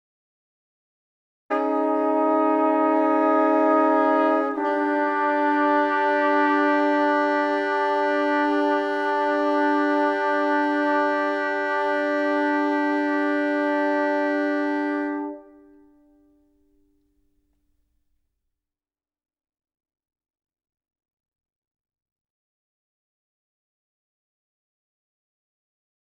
excerpt from a private concert. playing: dirk raulf, sax - thomas heberer, tp - matthias muche, trb